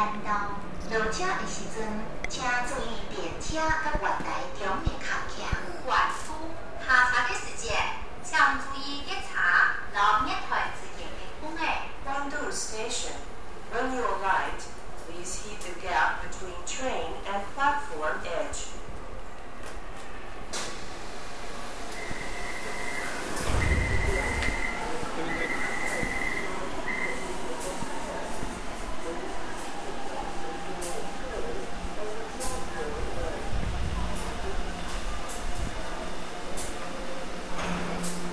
MRT GuanDu Station (Tamsui Line) - MRT Tamsui Line (From ChungYi Station to GuanDu Station)